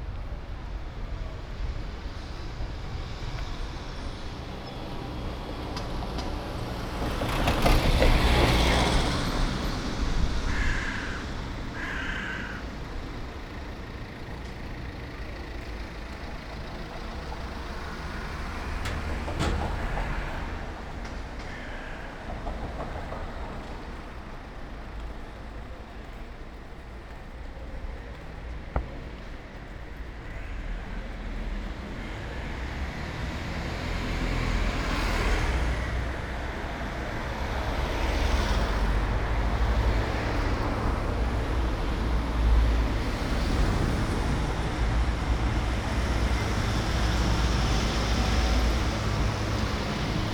Ascolto il tuo cuore, città. I listen to your heart, city. Several chapters **SCROLL DOWN FOR ALL RECORDINGS ** - Morning (far) walk AR-II with break in the time of COVID19 Soundwalk
"Morning (far) walk AR-II with break in the time of COVID19" Soundwalk
Chapter CXVIII of Ascolto il tuo cuore, città. I listen to your heart, city
Friday, August 14th, 2020. Walk to a (former borderline far) destination; five months and four days after the first soundwalk (March 10th) during the night of closure by the law of all the public places due to the epidemic of COVID19.
Round trip where the two audio files are joined in a single file separated by a silence of 7 seconds.
first path: beginning at 10:51 a.m. end at 11:16 a.m., duration 25’02”
second path: beginning at 03:27 p.m. end al 03:54 p.m., duration 27’29”
Total duration of recording 00:52:38
As binaural recording is suggested headphones listening.
Both paths are associated with synchronized GPS track recorded in the (kmz, kml, gpx) files downloadable here:
first path:
second path:
Go to Chapter LX, Wednesday, April 29th 2020 and Chapter CXVIII, Thursday July 16th 2020: same path and similar hours.
Piemonte, Italia